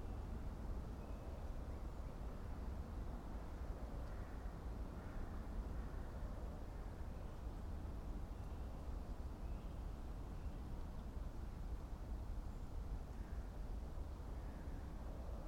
dale, Piramida, Slovenia - distant crowd of spectators
far away football match, crows and a variety of forest's small voices, young spring
Vzhodna Slovenija, Slovenija, 6 March 2013, 17:37